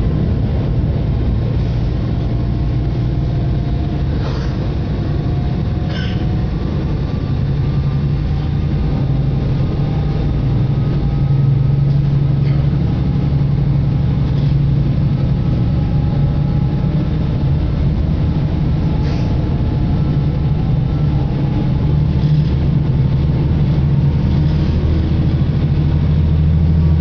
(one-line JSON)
{"title": "R. Ginjal, Portugal - Barco", "date": "2018-04-04 17:32:00", "description": "Som do barco de travessia Cacilhas - Cais do Sodré", "latitude": "38.69", "longitude": "-9.15", "altitude": "4", "timezone": "Europe/Lisbon"}